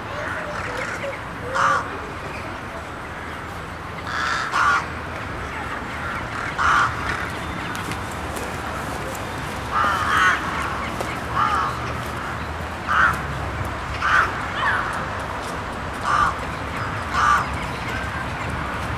Poznan, Jana III Sobieskiego housing estate - crows occupying tall trees
a flock of crows occupying a few tall trees. having their croaking conversations, flying around, jumping from branch to branch. their voices intensify like a wind. one minute they sit quietly, the other it morphs into blizzard of screams just turn into sparse beak snaps a second later.